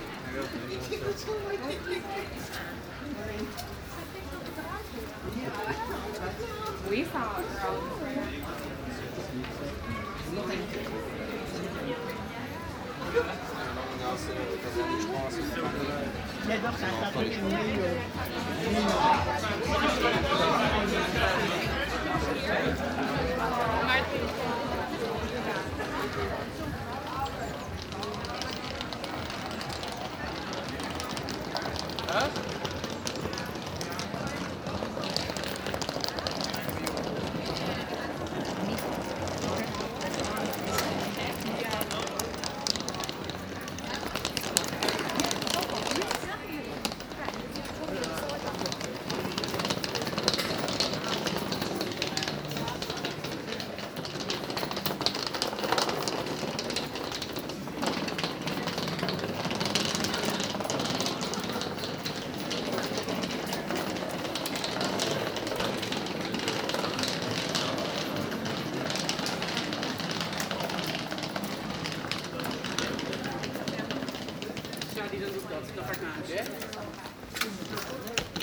Amsterdam, Nederlands - Asian people talking
Asian people talking loudly into the street and quiet street ambiance on a sunny afternoon.
Amsterdam, Netherlands, 28 March 2019, 15:30